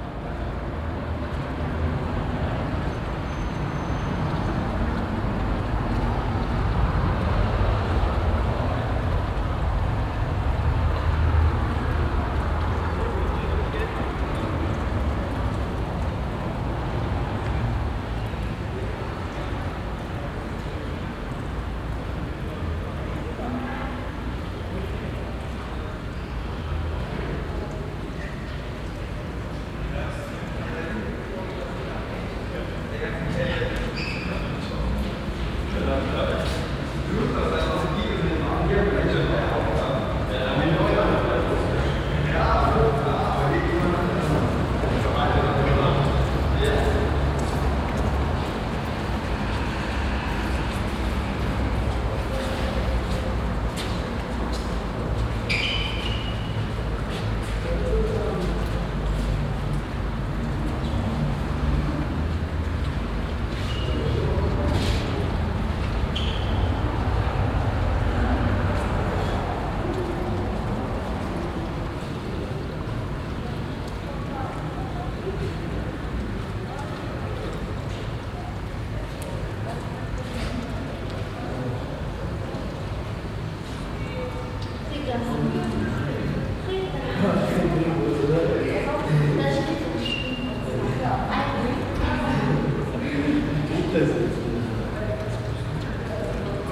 At the entry hall of the contemporary art museum K20. The sound of voices and steps reverbing in the open hallway with a small water pool.
This recording is part of the exhibition project - sonic states
soundmap nrw - topographic field recordings, social ambiences and art places